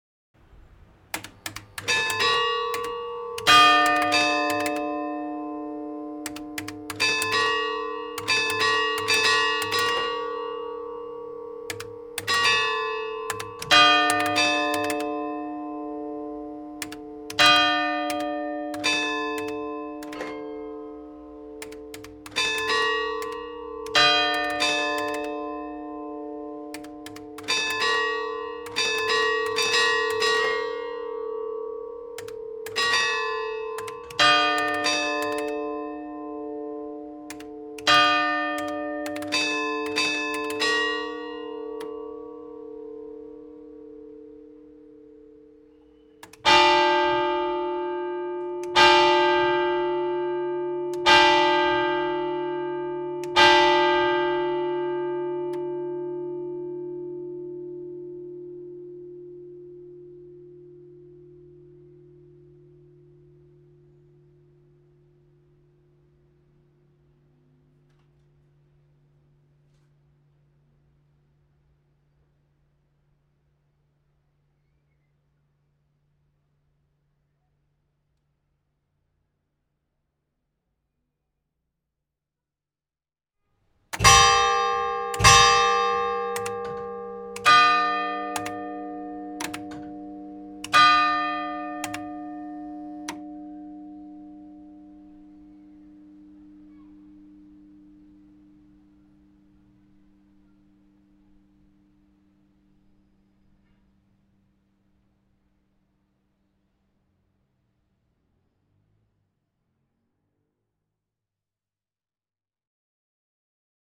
Hotel De Ville, Armentières, France - Armentières - Carillon
Armentières
Beffroi de l'hôtel de ville - carillon
Ritournelles automatisées pour 16h et 16h30